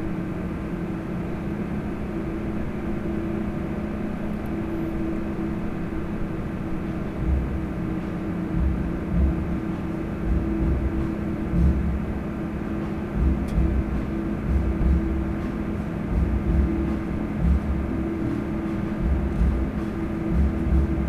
Gohlis-Süd, Leipzig, Deutschland - chimney at NML
The recording was made within the framework of a workshop about sound ecology of the class for sound art at Neue Musik Leipzig. Passing by antenna transformer station, bicycles, people, rehearsal in a music school. Neue Musik Leipzig - Studio für Digitale Klanggestaltung.